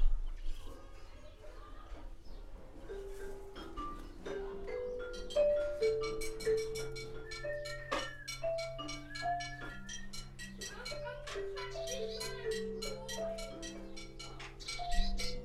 in the Forest Garden - blackbird chicks, school music session
blackbirds feed their demanding babies, children in the primary school next door bring their music lesson outside, cars drive past faster than the speed limit permits.